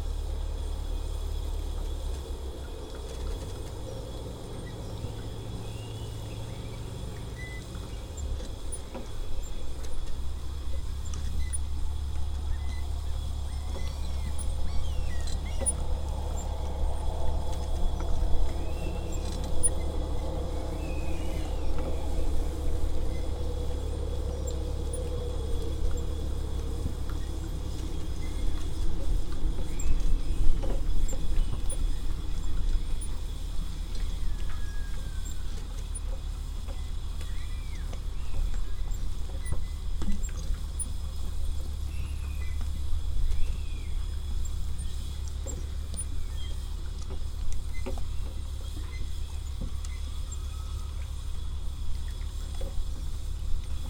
Fisksätra Marina - Au vent sur le Ponton